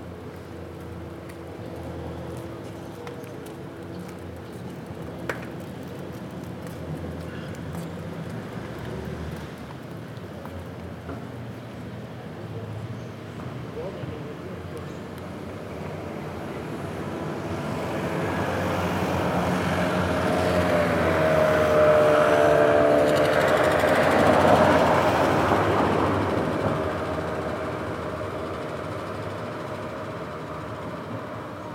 Nida, Lithuania - In Front of the Healthcare Center
Recordist: Liviu Ispas
Description: On a bench in front of the Healthcare Center on a sunny day. People, bikes, cars, motorcycles. Busy traffic and people talking. Recorded with ZOOM H2N Handy Recorder.
26 July, 17:44